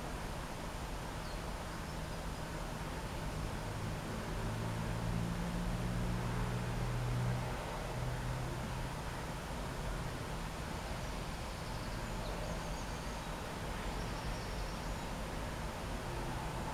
Jean Monnet park, Sint-Agatha-Berchem, Belgium - Serenity of insane
Sitting on the bench, with planes passing by every 3.5 minutes... In the distance, continuously, the noise of the traffic on the ring road... Plenitude of these alienated modern times.